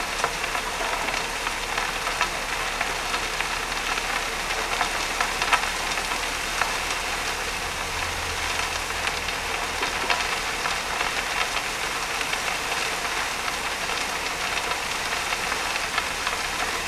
Yville-sur-Seine, France - Hopper dredger emptying
An hopper dredger cleans the Seine bed every day. One of this boat, called the Jean Ango, is berthed here like on the left on the aerial view. This hopper dredger is pushing the collected stones in an abandoned quarry, using two big metal tubes. Pushing the stones makes enormous noises and a very staggering nuisance for the neighbours. The recording contains in first the end of a cuve and at the middle of the time, a new tank. It was hard to stay here as the sound level was high. The boat volume is 5000 m³. It makes this nuisance during a very long time and also by night.
17 September 2016, ~19:00